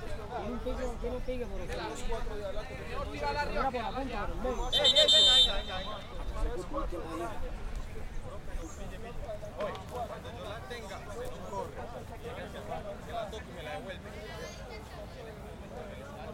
Cl., Medellín, Antioquia, Colombia - Cancha de fútbol Universidad de Medellín
Partido de fútbol (con momento de descanso en medio) en un día soleado, con poco público, sin que estén llenas las gradas.
Sonido tónico: Conversación, gritos, pasos corriendo.
Señal sonora: Pito del árbitro, gritos más duros al haber posibilidad de gol.
Tatiana Flórez Ríos - Tatiana Martínez Ospino - Vanessa Zapata Zapata